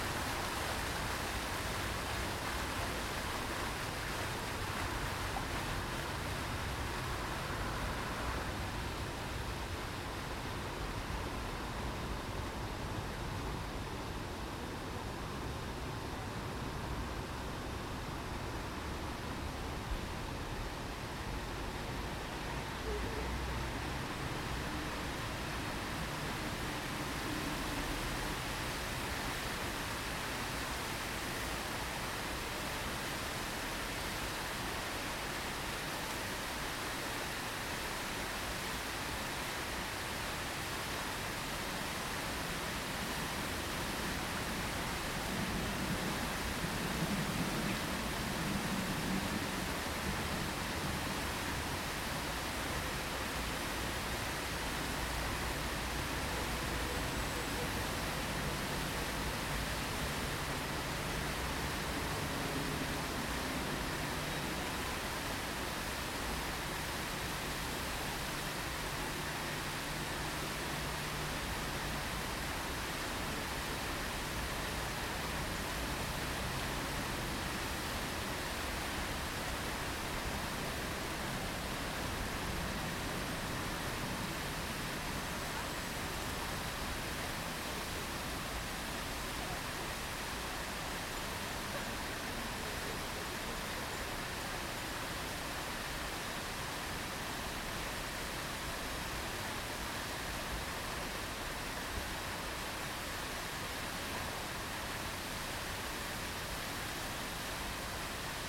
Full sound cycle of fountain @ Dabrowskiego square in Łódź